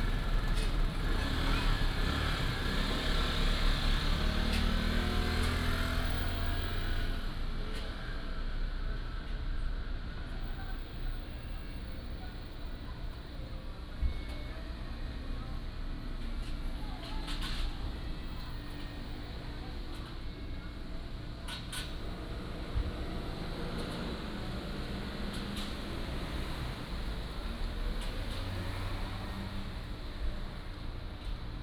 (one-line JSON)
{"title": "Jhongshan Rd., Rueisuei Township - Street corner", "date": "2014-10-08 16:05:00", "description": "Traffic Sound, Children", "latitude": "23.50", "longitude": "121.38", "altitude": "103", "timezone": "Asia/Taipei"}